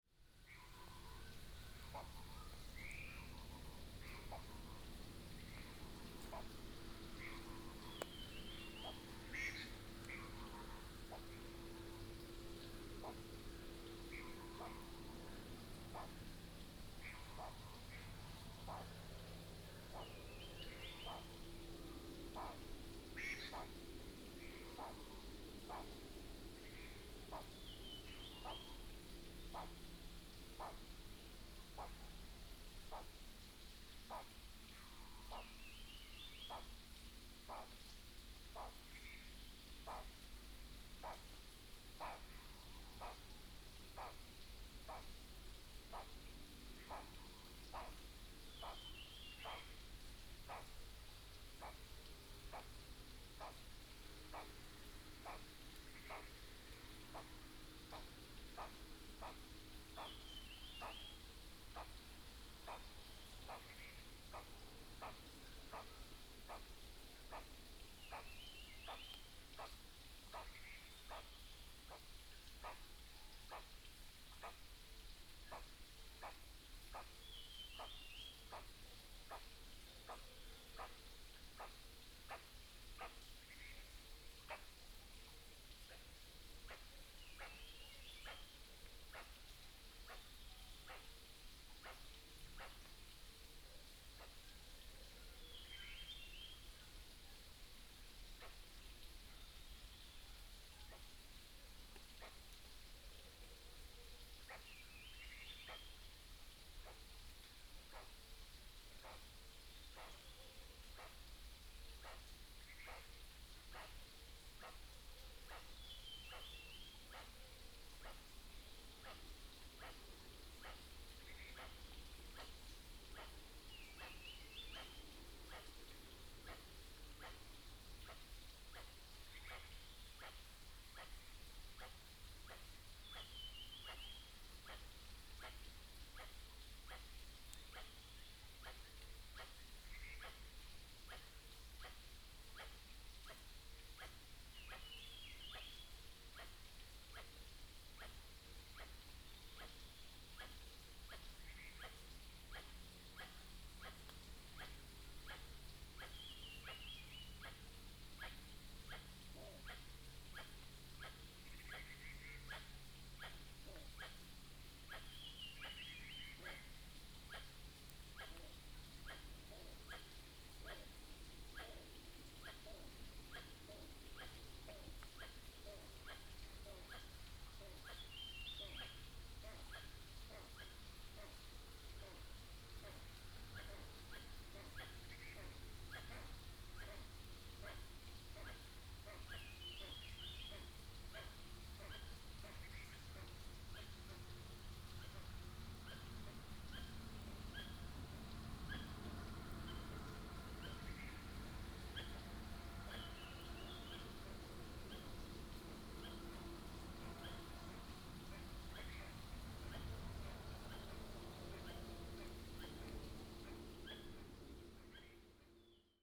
Bird sounds, Morning road in the mountains
Nantou County, Puli Township, 水上巷, 26 March 2016, 06:15